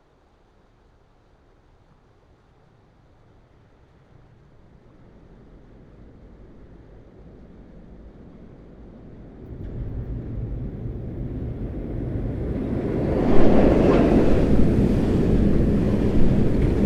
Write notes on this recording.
Recording of trains on "Red Bridge" in Bratislava, at this location railway line leads through city forest. Passenger train, freight trains.